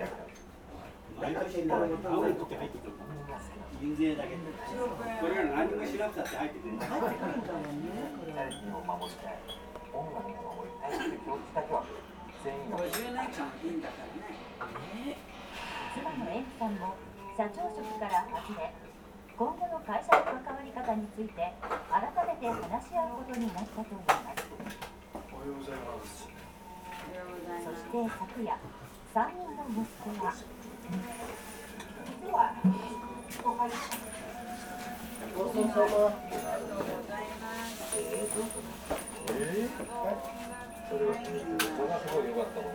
Japonia, Tōkyō-to, Taitō-ku, Asakusa, サニー - sunny cafe
inside a small cafe in Asakusa. most of the interior are clocks ticking constantly. owner talking to local customers. customers reading newspapers and talking. tv show and commercials above. (roland r-07)